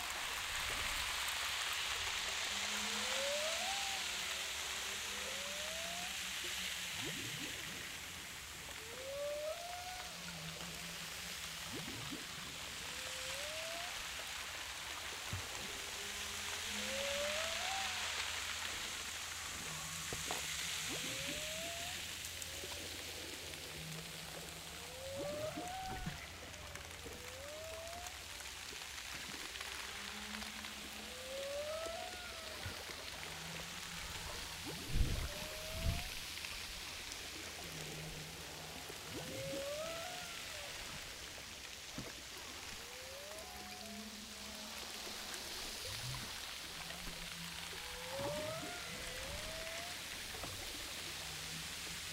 Humpback whales off Saint Paul
baleines à bosse au large de saint paul forte houle